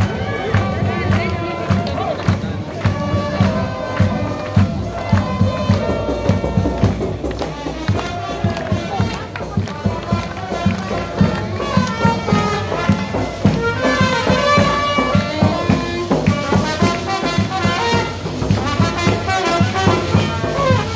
Rue Wenceslas Riviere, Réunion - ORCHESTRE WAKI BAND CILAOS
ORCHESTRE WAKI BAND CILAOS lors du buffet de la remise de l'écharpe du Maire